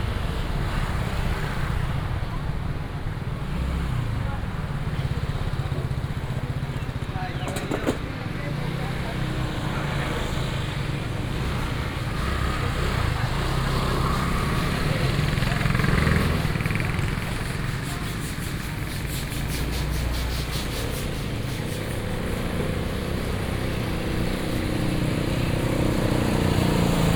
Nantian Market, East Dist., Chiayi City - Stock market
In the Stock market, The whole is finishing the goods ready to rest, Traffic sound